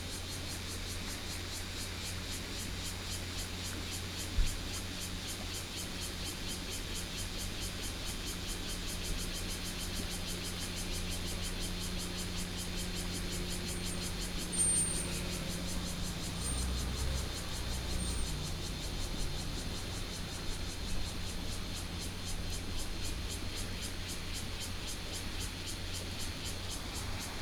新福里, Guanshan Township - Streams and cicadas
The sound of water, Cicadas sound, The weather is very hot
Taitung County, Taiwan, 7 September 2014, 11:03